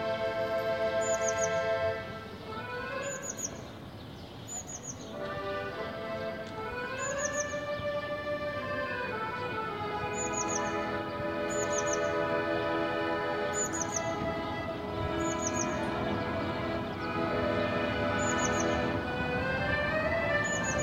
I heard a busker on the street, five floors down from my balcony, playing the accordion.
Only when he changed to the other side of the street, I could also see him.
He would usually play for people sitting in front of cafés and restaurants. The same tune every day, as soon as it gets warm. But now... in the empty street of Corona pandemic times, he wandered around, until someone in the house on the opposite side, from his balcony, threw some money inside a bag down to the street for him to take. Then he continued his walk.
Recorded on Sony PCM D100
Deutschland, 5 April 2020